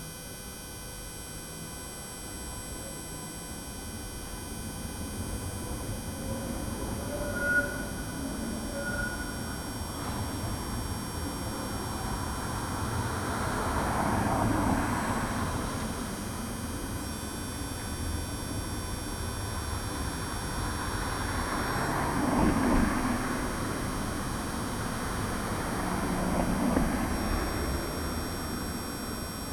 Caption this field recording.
buzz of a small tram power distribution station aside the street.